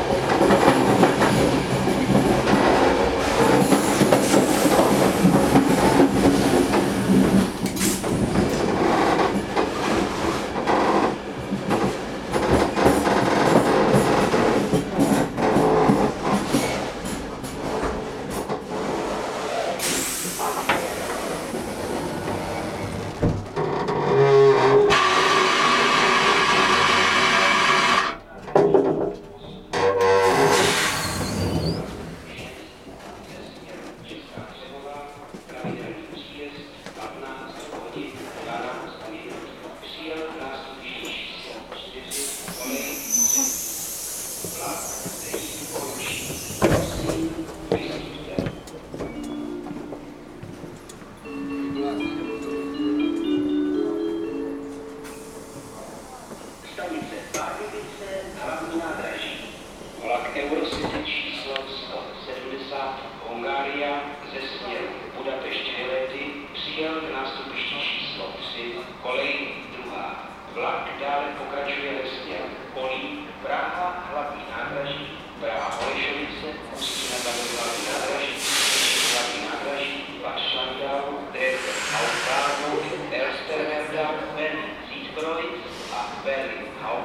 Istanbul - Berlin: train entering Pardovice station
On the way to Prague the train rattles less and less on the rails. Here, arriving at Pardovice station. Coming all the way from Turkey, the auditory impression is distinctly: less romantic sounds on more western tracks.